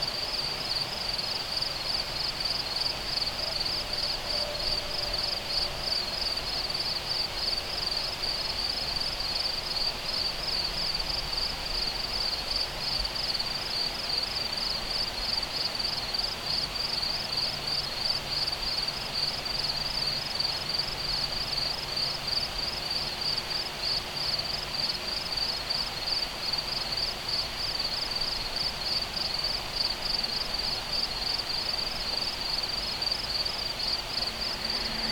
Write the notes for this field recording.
Metabolic Studio Sonic Division Archives: Recording of crickets taken at night on bank of Owens River. Recorded on Zoom H4N